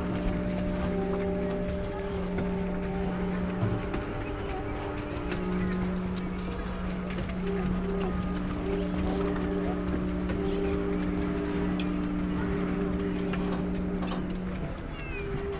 Viña del Mar, Valparaíso, Chile - Tsonami sound performance at laguna Sausalito
Viña del Mar, laguna Sausalito, sound performance for 16 instruments on pedal boats, by Carrera de Música UV and Tsonami artists
(Sony PCM D50, DPA4060)